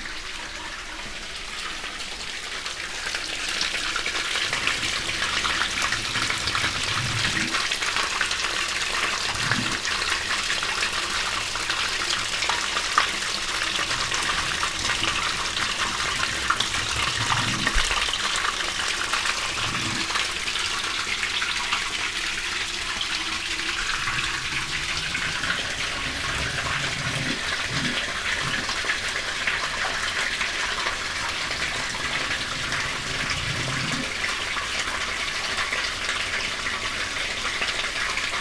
water well, Studenci, Maribor - concrete trough, second
Maribor, Slovenia